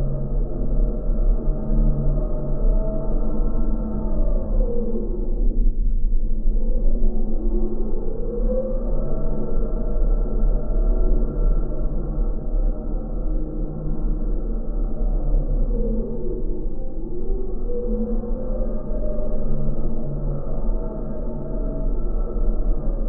Birštonas, Lithuania, wake park rope
Wake park rope recorded with contact microphone
Kauno apskritis, Lietuva, 19 June 2022